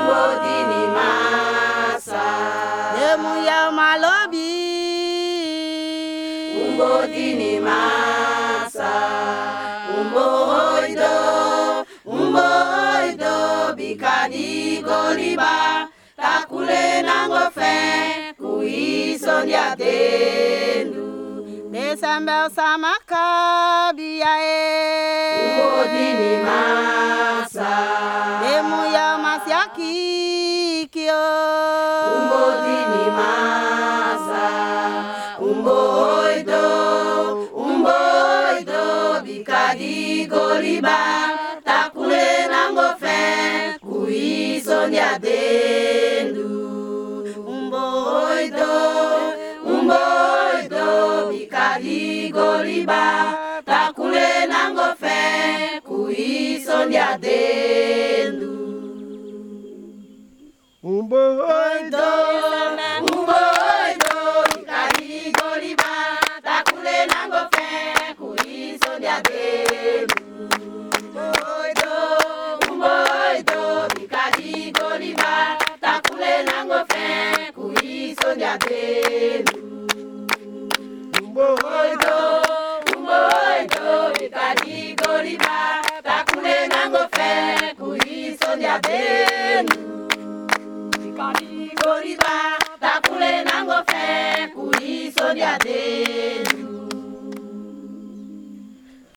Boven-Suriname, Suriname - Futunakaba village women singing
Futunakaba village women singing. These women were participating in a project to learn them sustainable agriculture and hpow to sell their surplus on local markets. So for the first time they got money in their purse. Some men were happy with this extra income, others objected to it: women with thwie own money could get to much confidence and a big mouth. I asked a woman what she woulkd buy from her first well-earned money. She answered: "Matrassi!"
7 May 2000